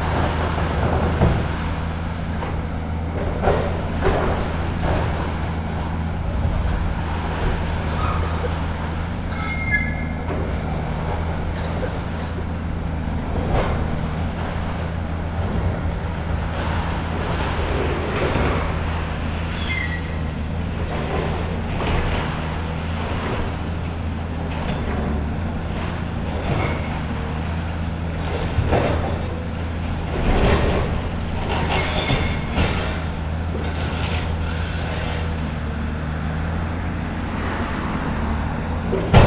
berlin: maybachufer - the city, the country & me: demolition of a warehouse
demolition of a warehouse, excavator with grab breaks up parts of the building
the city, the country & me: march 2, 2016